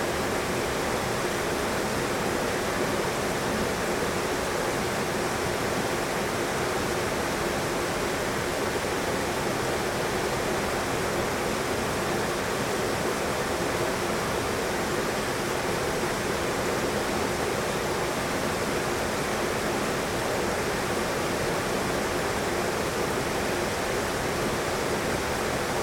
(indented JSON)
{
  "title": "Mt Tamalpais drainpipe, Marin CA",
  "description": "white noise of creek sounds recorded in a large drain pipe",
  "latitude": "37.91",
  "longitude": "-122.58",
  "altitude": "210",
  "timezone": "Europe/Tallinn"
}